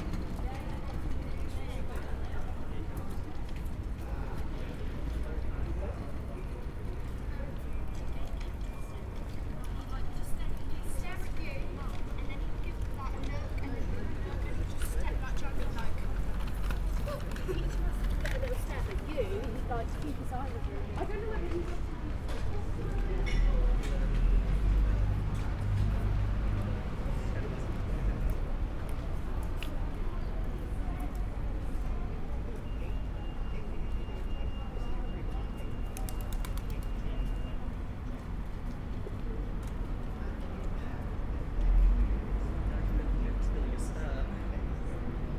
Town Hall, Reading, UK - The clock strikes six
This is the sound of the Town Hall Clock striking six. I was walking home after a day of recording in Oxford, and I noticed that there was a little time to set up microphones ahead of the hour striking. I attached two omni-directional microphones to a bicycle frame with velcro, and settled in to listen to my town. This area is pedestrianised, but there is a fairly large bus route passing through to the side of it... so you can hear the buses and taxis, but lots of lovely bikes as well, and people walking, and the festive feeling and laughter at the end of the working day in the town, in summer.